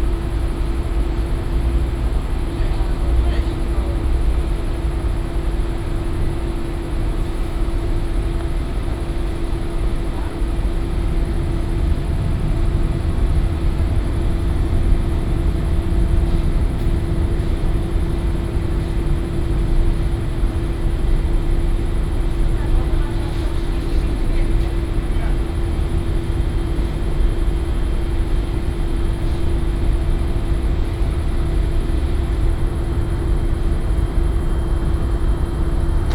Sobieskiego housing complex - bench in front of laundry
(binaural recording) recorded in front of a laundry. hum of commercial washing machines. employees talking a bit. (roland r-07 + luhd PM-01 bins)
11 August 2019, ~22:00